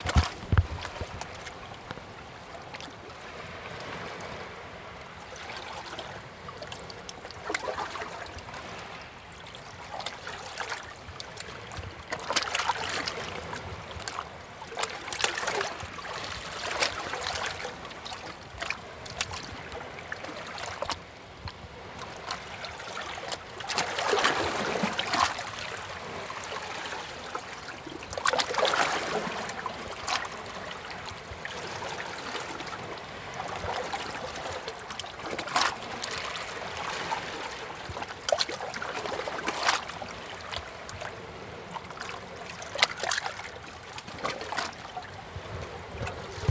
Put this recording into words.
ho usato il mio Zoom H2N in modalità MS, io in piedi sopra gli scogli l'ho puntato verso il frangersi delle onde